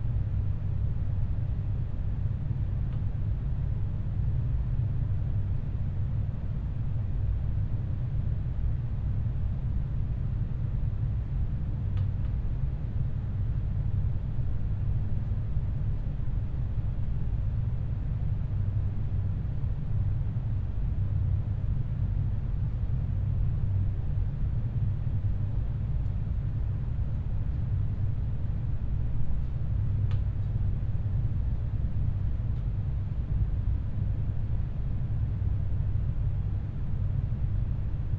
Bibliothek Wirtschaft & Management @ TU Berlin Hauptgebäude - Enter Bib Wirtschaft & Management